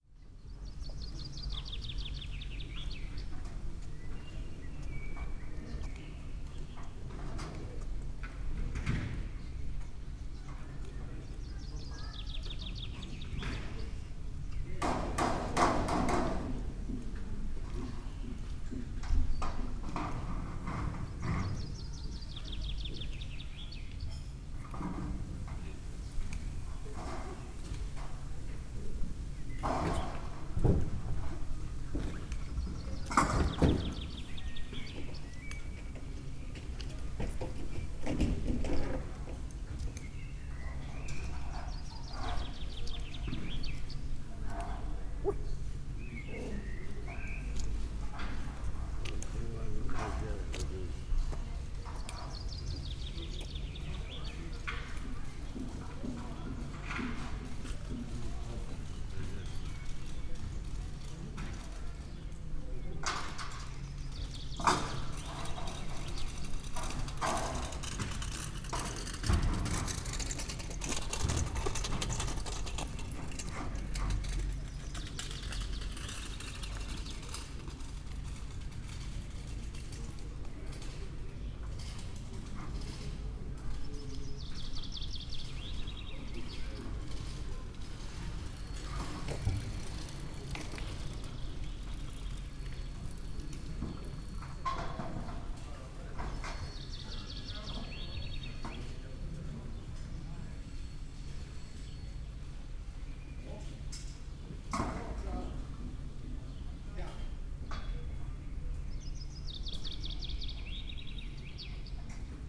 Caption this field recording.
ambience between two blocks of buildings. nothing special happened, which is nice sometimes. (SD702 DPA4060)